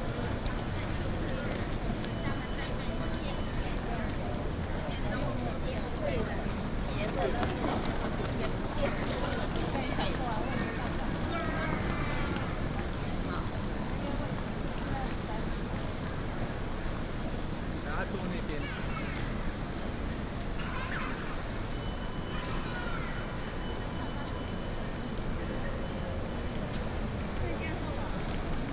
HSR Zuoying Station, Taiwan - In the station hall
In the station hall, trunk